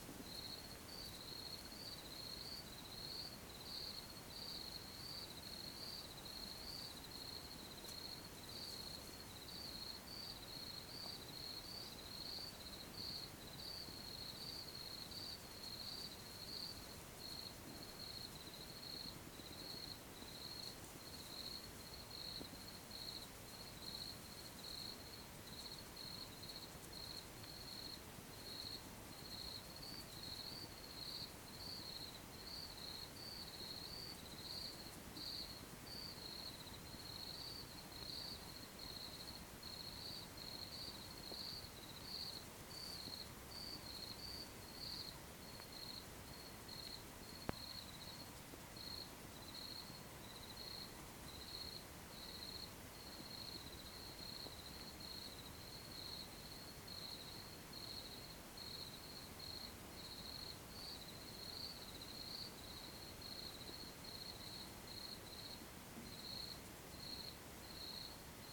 La Bruyère de Saint-Clair, La Hoguette, France - Crickets and Bird scarers Bombs on a quiet night.
Crickets and Bird scarers Bombs in the distance on a quiet night.
Set up: Tascam DR100 MK3, CAD e70 cardio.
France métropolitaine, France, 2 May 2020